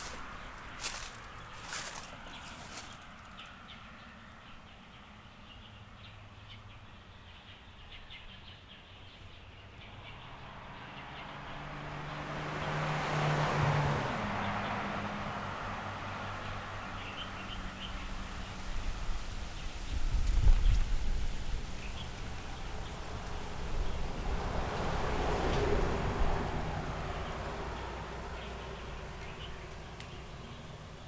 Stepping on leaves, Birds singing, Traffic Sound, Zoom H6 M/S
16 January, 13:02